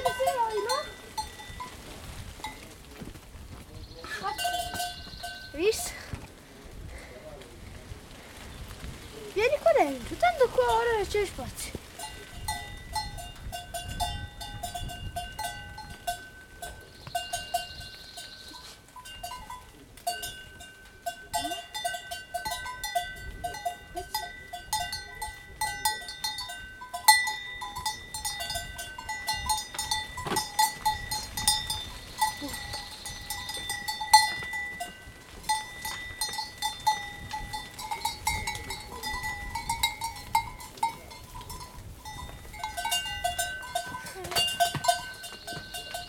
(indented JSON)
{
  "title": "Geraci Siculo PA, Italia [hatoriyumi] - Gregge di capre, voci di pastorello e campanacci",
  "date": "2012-05-21 16:20:00",
  "description": "Gregge di capre, voci di pastorello e campanacci",
  "latitude": "37.84",
  "longitude": "14.16",
  "altitude": "1021",
  "timezone": "Europe/Rome"
}